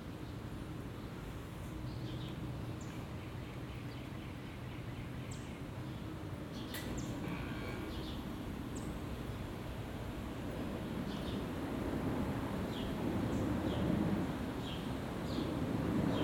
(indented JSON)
{
  "title": "Marshall Rd Pittsburgh, PA USA - Flight Path",
  "date": "2021-06-15 15:30:00",
  "description": "Binaural recording of a plane passing over (from right ear to left ear) on approach to Greater Pittsburgh International Airport... test with Sennheiser Ambeo Smart Headset",
  "latitude": "40.48",
  "longitude": "-80.02",
  "altitude": "324",
  "timezone": "America/New_York"
}